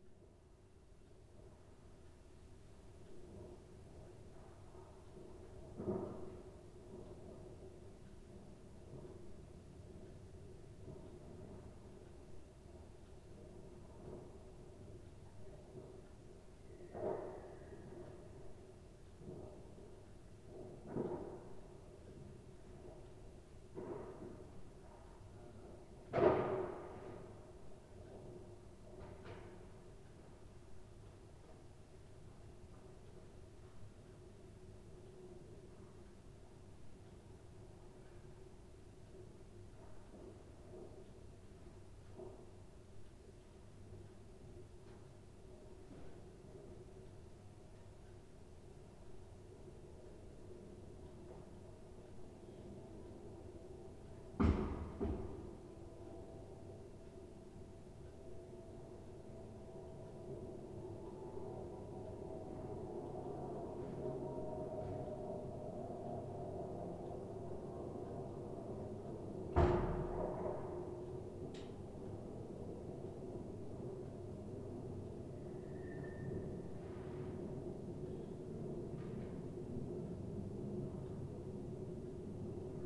new year's eve afternoon, noise of bangers and other fireworks, someone smashes bottles in the bottle bank, noise of steps in the snow, planes crossing the sky and the noise of the gas heating
the city, the country & me: december 31, 2009